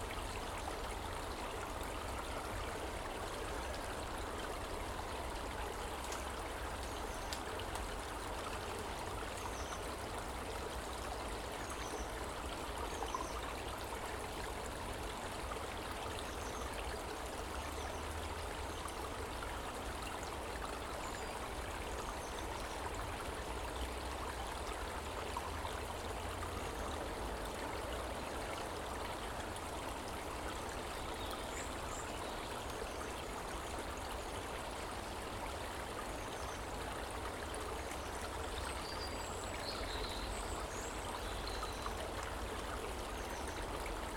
Valley Garden, Highfield, Southampton, UK - 003 Water, birdsong, sirens
Valley Garden, Southampton University. Tascam DR-40
January 2017